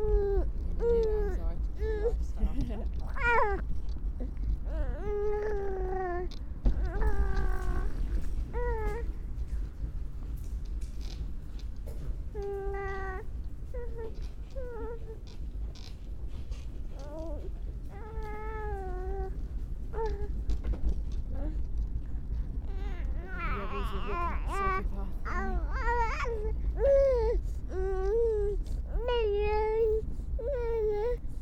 Chichester, West Sussex, UK - Barnaby's tiny 16 week old sounds
This is one of my nephews, Barnaby. He is about 16 weeks old and is starting to make all sorts of sounds. I love these little growls and utterances, a bit grizzly and then happy again... I love listening as he gets to grips with having a voice and exploring his ability to make noises with it. Sometimes he startles himself with his own sounds so I don't know how well he understands that he himself is making these noises! In this recording I am walking with Mel - Barnaby's mother and my sister-in-law - and we are chatting about his sounds. The recorder is in the pram and Barnaby is chatting into it. You can hear the rumble of the pram, the distant traffic, and us chatting about when my train home will be there. We pass into a tunnel at some point which makes Barnaby's sounds especially sonorous.
5 February 2015